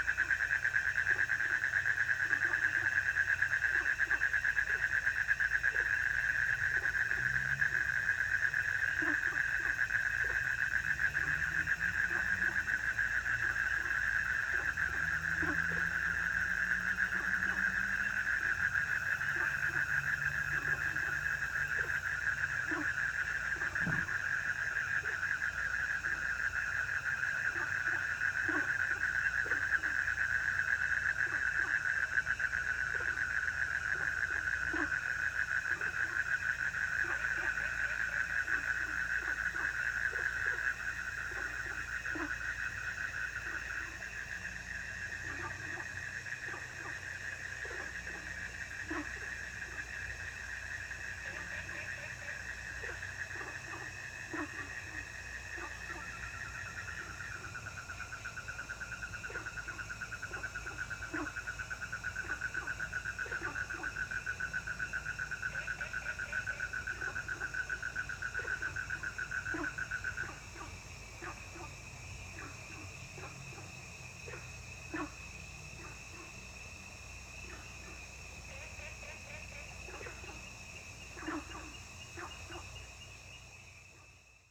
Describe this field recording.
Sound of insects, Frogs chirping, Ecological pool, Zoom H2n MS+XY